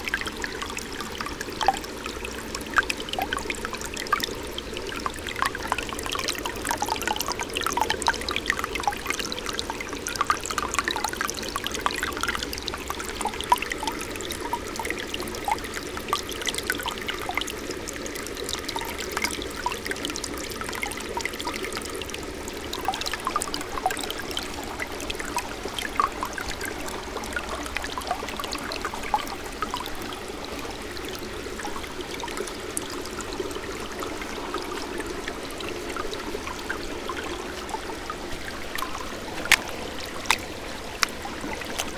moss garden, Studenice, Slovenija - soft green, water
Poljčane, Slovenia, 2 August 2015